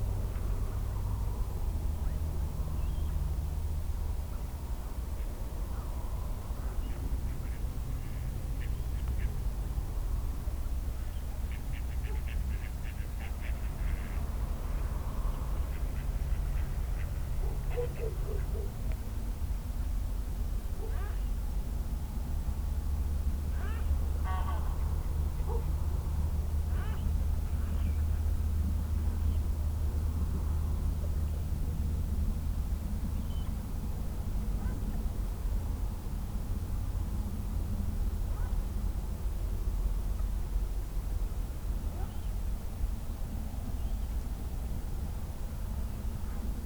lancken-granitz: neuensiener see - the city, the country & me: evening ambience
sheep, wild geese, ducks, barking dogs and other busy animals
the city, the country & me: march 5, 2013
Deutschland, European Union